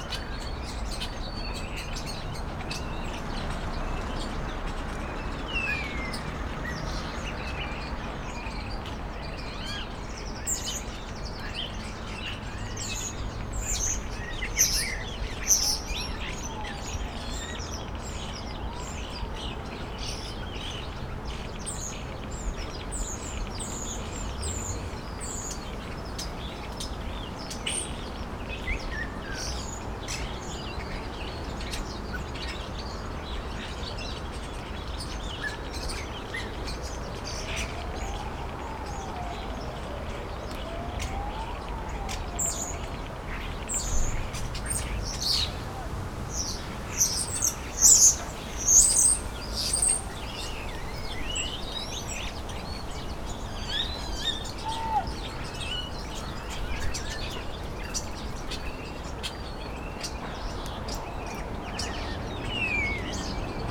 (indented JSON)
{
  "title": "Poznan, Piatkowo distrtict, Chrobrego housing estate - a tree possessed by birds",
  "date": "2012-09-21 12:43:00",
  "description": "quite surprised to hear such variety of bird chirps in the middle of the city around cars, apartment buildings and ambulance alarms",
  "latitude": "52.45",
  "longitude": "16.92",
  "altitude": "93",
  "timezone": "Europe/Warsaw"
}